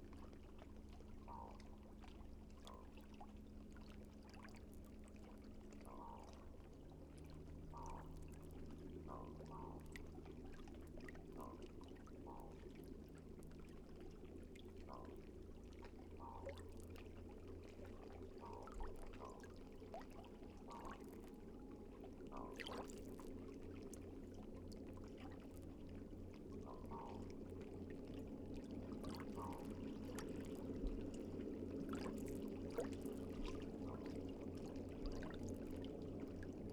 GRENOUILLE BATEAU DE PÊCHEUR AU LOINTAIN ET LAC
SD MixPre6II, couple MS 4041/MKH30 dans Cinela PIA2
Norrbottens län, Sverige, 13 August 2021, 08:58